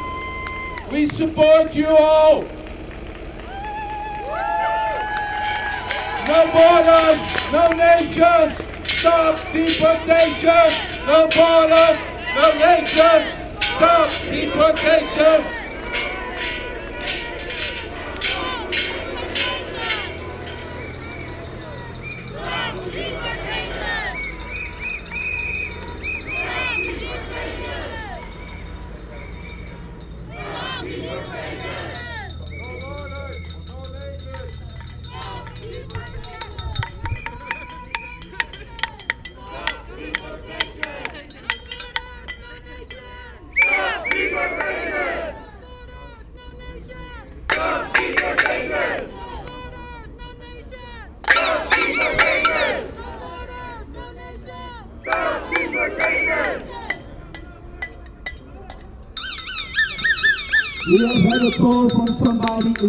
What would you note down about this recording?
Demonstration against Detention Centrum. Recorded w/ Parabolic Dish Dodotronic.